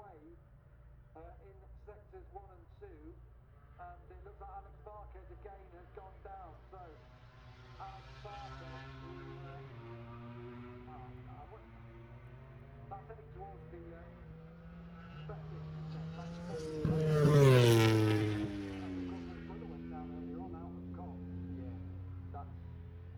Silverstone Circuit, Towcester, UK - british motorcycle grand prix 2021 ... moto grand prix ...
moto grand prix free practice two ... maggotts ... olympus 14 integral mics ...